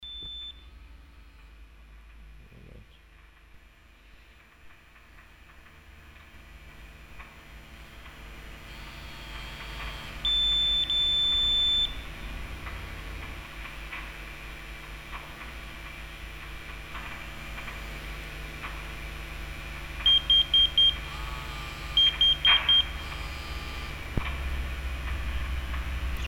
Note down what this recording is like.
At the local civil protection and fire brigade department. Starting with the sound of a vibrating mobile messenger that receives an alarm message. Followed by different sounds and signals that are typical for the work at this operations center. Thanks a lot to Zenterchef Marc Portzen for his support to record these sounds. You can find more informations about the centre d' ìntervention here: Hosingen, Einsatzzentrum, Signale und Alarmgeräusche, Im regionalen Erste-Hilfe- und Feuerwehrzentrum. Zunächst das Geräusch eines vibrierenden mobilen Meldegeräts, das eine Alarmmeldung erhält. Gefolgt von anderen Geräuschen und Signalen, die typisch für die Arbeit in diesem Einsatzzentrum sind. Vielen Dank an den Chef Marc Portzen für seine Unterstützung für die Aufnahme dieser Geräusche. Hosingen, centre d'intervention, signaux et bruit d'une alame, Au service local de protection civile et de lutte contre les incendies.